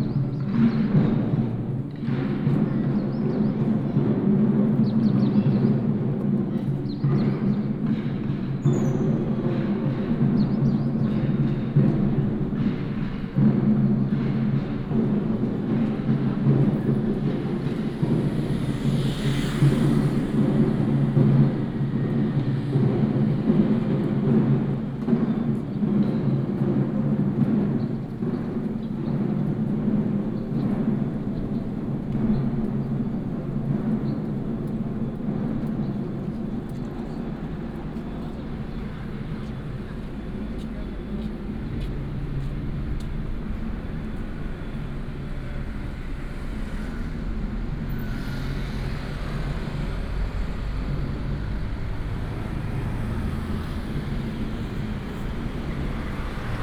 Ln., Wanda Rd., Wanhua Dist., Taipei City - Walk outside the school
Walk outside the school, alley, traffic sound